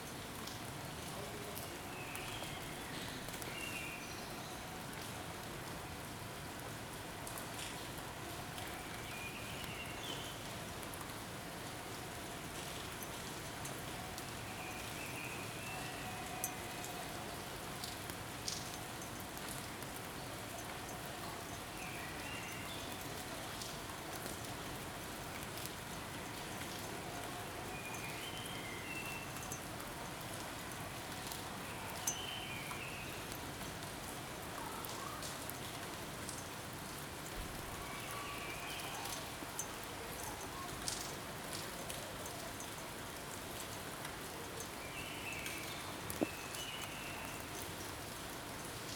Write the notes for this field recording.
Raw field recording made from the window during the COVID-19 Lockdown. Rain and sounds from the city streets and the neighbours. Recorded using a Zoom H2n.